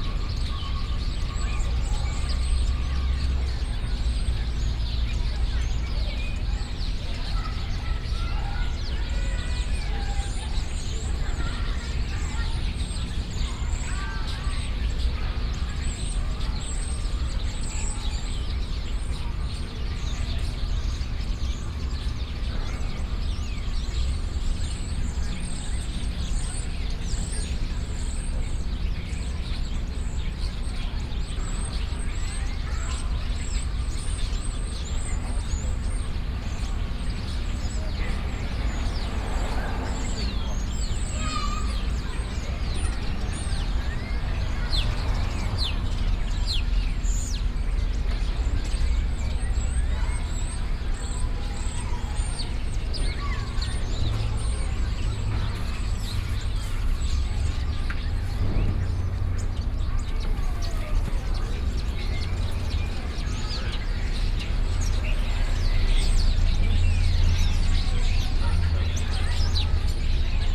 Washington DC, Madison Dr NW, Birds
USA, Virginia, Washington DC, Birds, Binaural
DC, USA, 15 November 2012